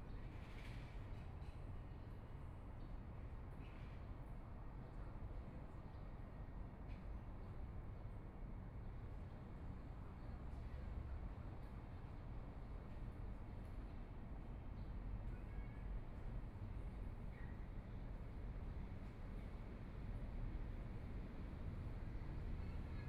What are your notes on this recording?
Sitting in the park, The distant sound of airport, Traffic Sound, Aircraft flying through, Binaural recordings, Zoom H4n+ Soundman OKM II